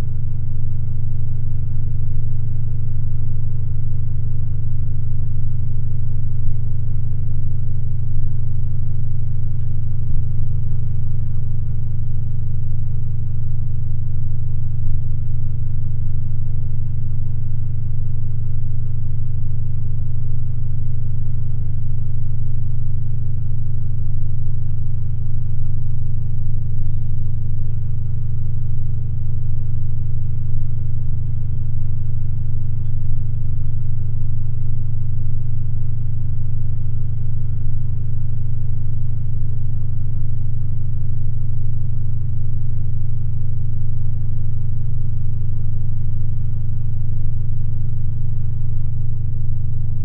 Mont-Saint-Guibert, Belgique - The dump
This is the biggest dump of Belgium. A factory is using gas coming from the garbages in aim to produce electricity. Recording of the boiler.